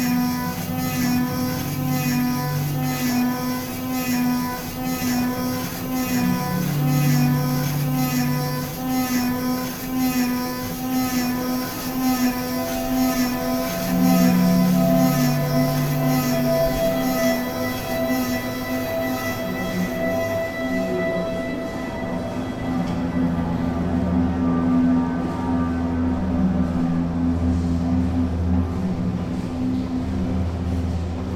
Veletrzni palac, PQ exhibition
walk around the Prague Quadrienale exhibition at the Veletrzni palace, where is the National Gallery collection of modern and contemporary art.